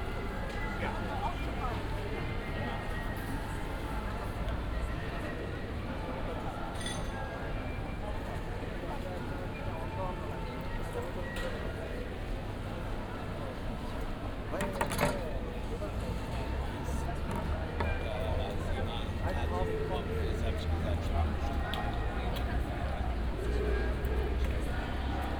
a demonstration of kurdish and yezidish people starts at Williy-Brandt-Platz, Essen
(Sony PCM D50, OKM2)